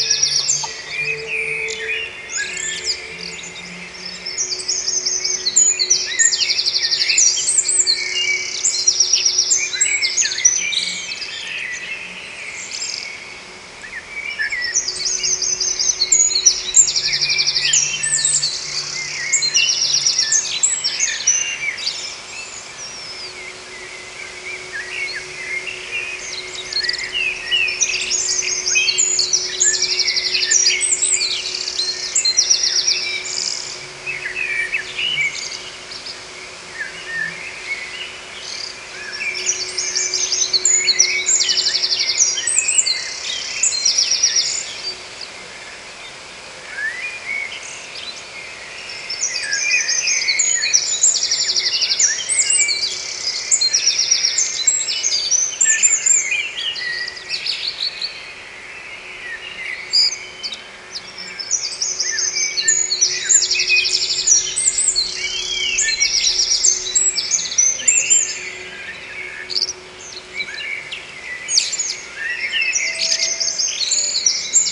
Grauwe Broedersstraat, Diksmuide, Belgium - Birds In The Fields
Recorded onto a Marantz PMD661 with a stereo pair of DPA 4060s
9 May 2017